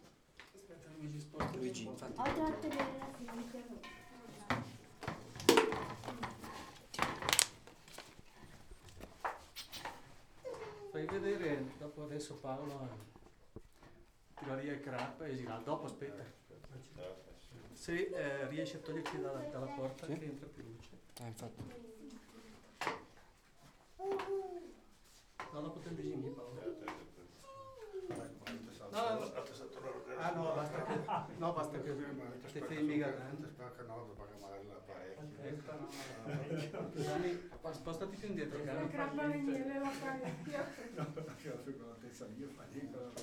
Valdidentro SO, Italia - shepherd and cheese
Province of Sondrio, Italy, 19 August 2012